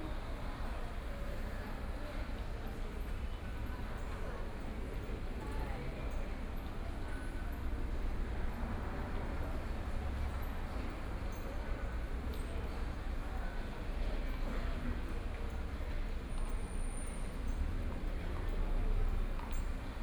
Shilin Station, Taipei - Ambient sound in front of the station
Ambient sound in front of the station, sitting in the MRT station entrance And from out of the crowd, MRT train stops on the track and off-site, Binaural recordings, Zoom H6+ Soundman OKM II